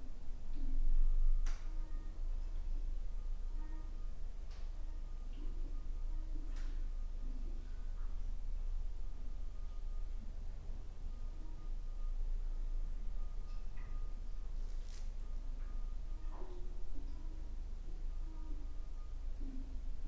중도 부두 Jung Island disused wharf gusty day March2020
중도 부두 (中島) Jung Island disused wharf_gusty day_March 2020...a quiet place, small sounds and sounds from a distance are audible....sounds that arrive under their own power or blown on the wind....listening at open areas on the wharf, and cavities (disused ferry interior, cavities in the wharf structure, a clay jar)….in order of appearance…